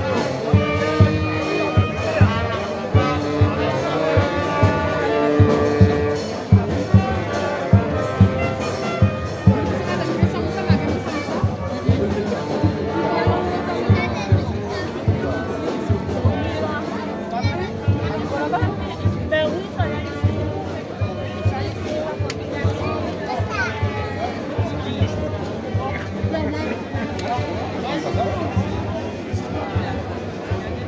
Rue Wenceslas Riviere, Réunion - ORCHESTRE WAKI BAND CILAOS
ORCHESTRE WAKI BAND CILAOS lors du buffet de la remise de l'écharpe du Maire